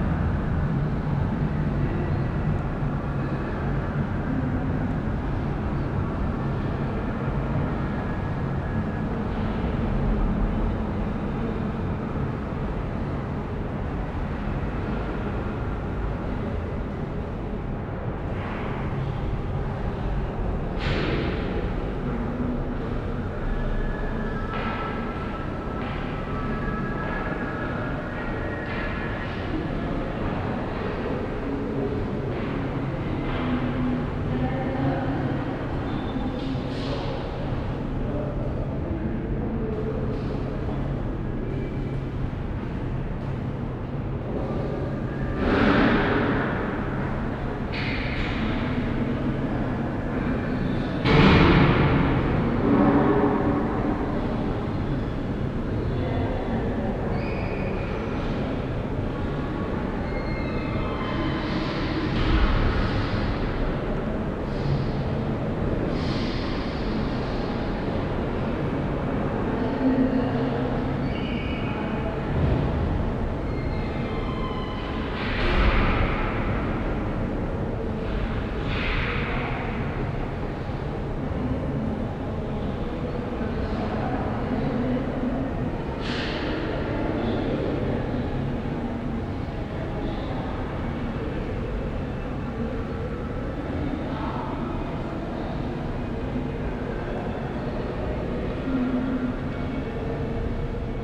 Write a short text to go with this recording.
Inside the classical building of the Düsseldorf art academy in the hallway of the first floor. The sound of steps, voices and transportation reverbing from the long and high stone walls. This recording is part of the exhibition project - sonic states, soundmap nrw - topographic field recordings, social ambiences and art places